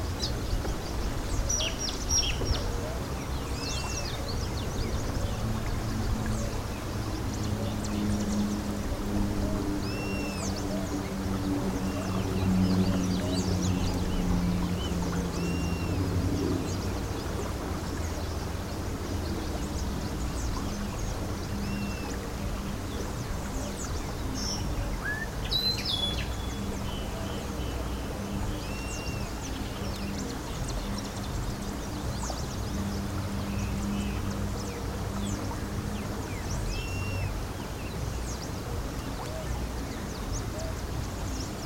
San Jacinto de Buena Fe, Ecuador - Bajaña River
Sitting by the river.